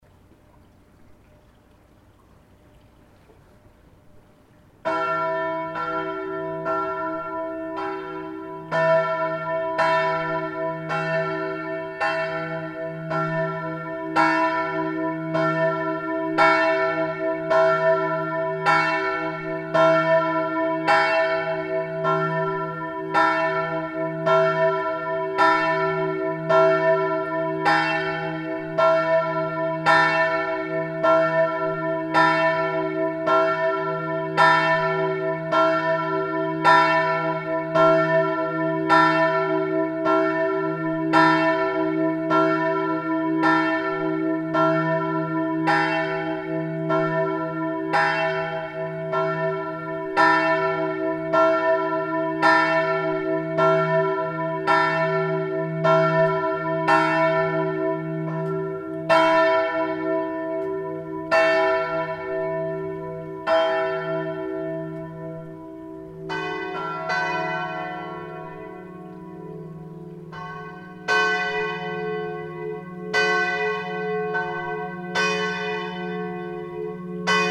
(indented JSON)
{
  "title": "Poschiavo, Schweiz - Morgen einläuten",
  "date": "2016-09-30 07:00:00",
  "description": "Poschiavo erwacht mit Glockenklang",
  "latitude": "46.33",
  "longitude": "10.06",
  "altitude": "1015",
  "timezone": "Europe/Zurich"
}